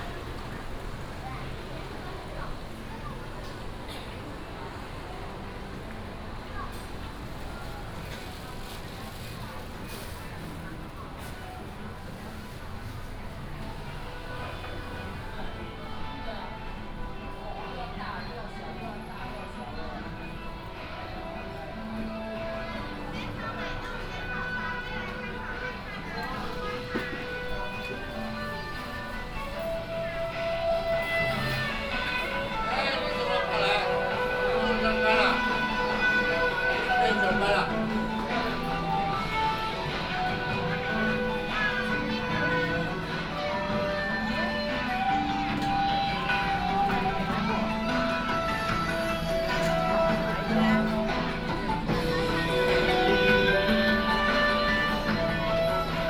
湖口老街, Hukou Township - Traditional old building blocks
Traditional old building blocks, traffic sound, Shopping Street, Tourists
Hsinchu County, Taiwan, 12 August, 16:52